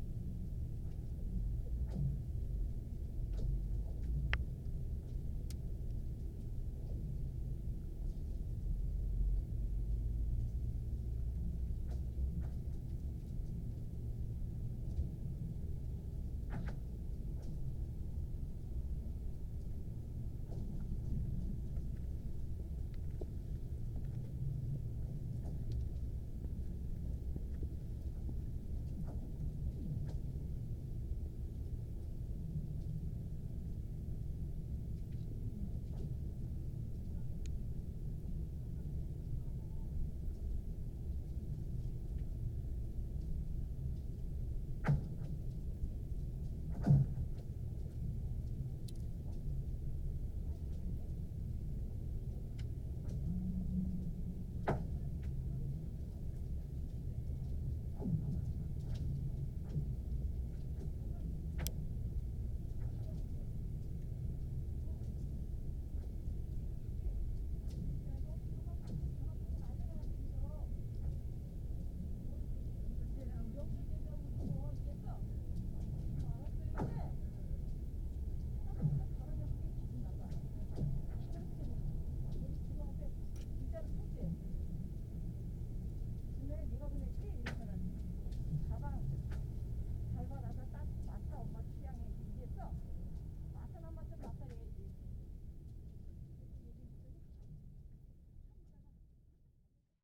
Chuncheon Lake Ice Formation, Gangwon-do, South Korea - Chuncheon Lake Ice Formation
Chuncheon Lake Ice Formation. When the temperature suddenly fell in late January the Chuncheon Lake froze over entirely. The ice rapidly became thick enough for people to walk onto and start skating or ice fishing. Over the first few days the ice was forming rapidly and some incredible acoustic phenomena from the heaving and splitting of ice sheets could be heard echoing around the lake basin area.